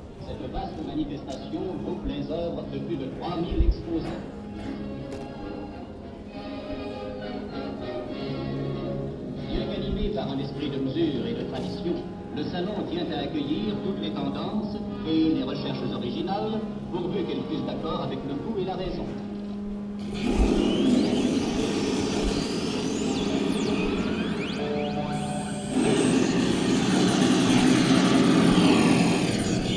Chaillot, Paris, France - Palais de Tokyo
Walking around the still half finished new areas at the Palais de Tokyo during the 30 hr non-stop exhibition.